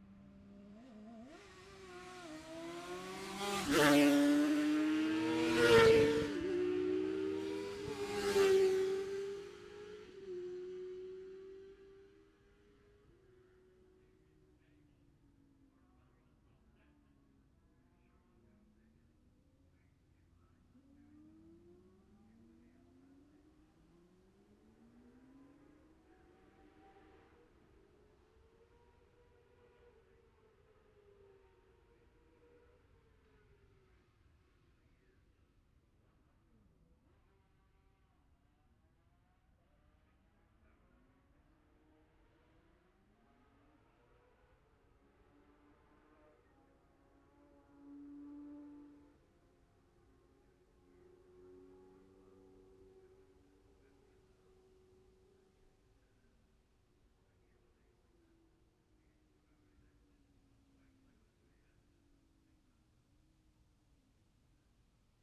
Jacksons Ln, Scarborough, UK - Gold Cup 2020 ...
Gold Cup 2020 ... 2 & 4 strokes qualifying ... Memorial Out ... dpa 4060s to Zoom H5 ...